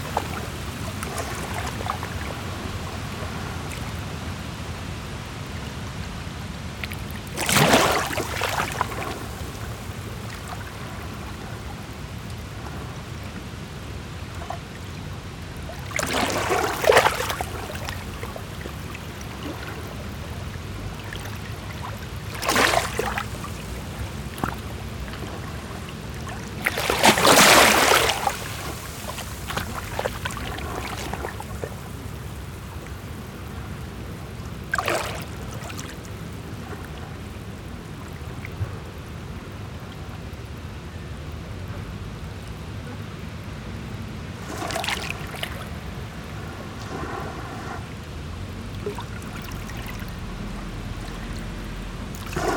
Recording of waves at the beach. This has been done simultaneously on two pairs of microphones: MKH 8020 and DPA 4560.
This one is recorded with a pair of DPA 4560, probably not a precise AB with mic hanging on the bar, on Sound Devices MixPre-6 II.
1 October 2021, ~14:00